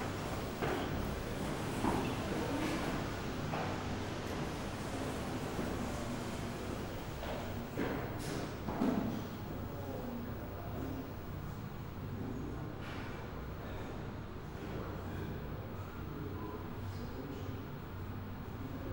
city toilet ambience at alexanderplatz, berlin.